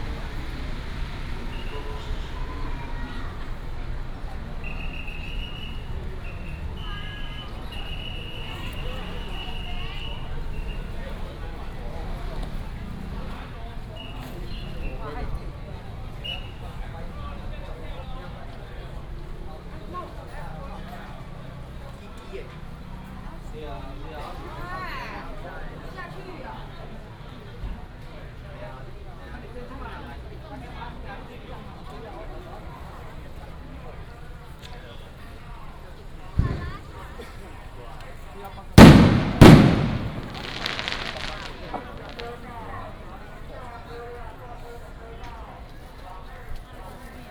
Shatian Rd., Shalu Dist., Taichung City - Walking on the road
Firecrackers and fireworks, Traffic sound, Baishatun Matsu Pilgrimage Procession
Taichung City, Taiwan